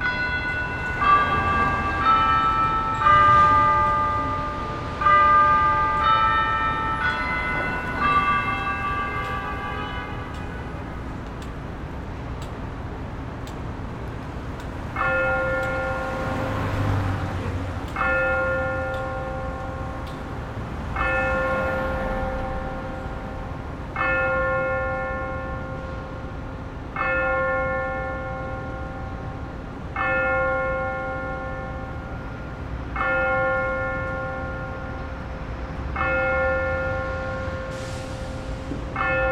E 43rd St, New York, NY, USA - Church of Saint Agnes, Midtown
Sound from the Church of Saint Agnes at 10 AM in Midtown, Manhattan.
1 April 2022, 10:00